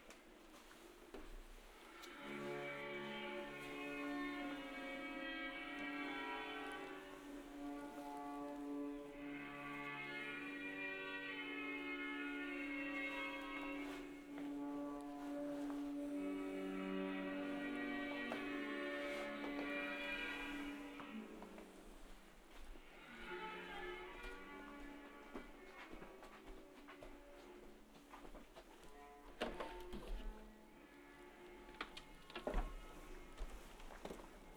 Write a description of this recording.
"Round Noon bells on Saturday with open market in the time of COVID19" Soundwalk, Chapter XVIX of Ascolto il tuo cuore, città. I listen to your heart, city, Saturday April 18th 2020. San Salvario district Turin, walking to Corso Vittorio Emanuele II and back, thirty nine days after emergency disposition due to the epidemic of COVID19. Start at 11:55 p.m. end at 12:20p.m. duration of recording 35'30'', Files has been filtered in post editing to limit wind noise. The entire path is associated with a synchronized GPS track recorded in the (kmz, kml, gpx) files downloadable here: